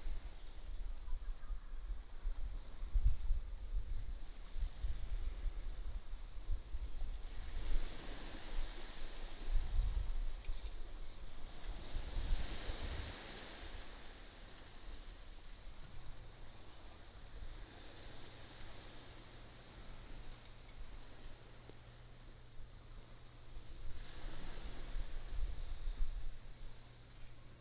馬祖村, Nangan Township - sound of the waves
sound of the waves